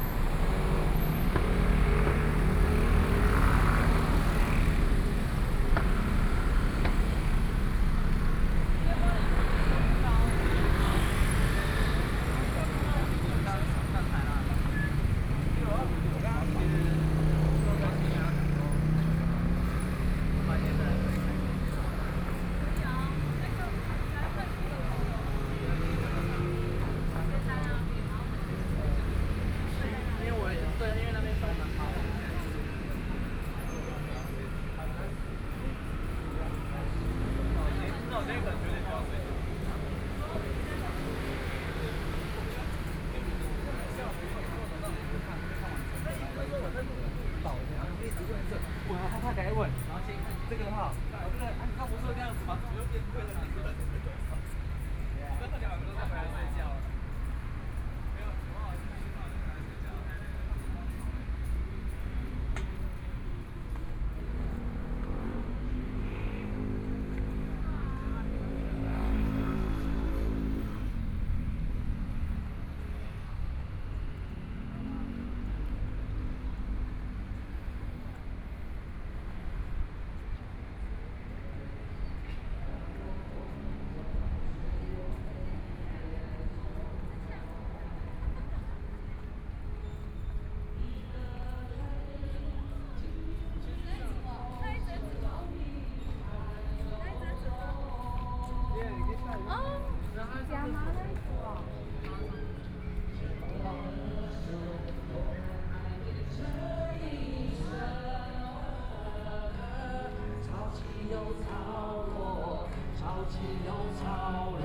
Hualien Cultural Creative Industries Park - Walking through the park
Various shops voices, Tourists, Winery transformed into exhibition and shops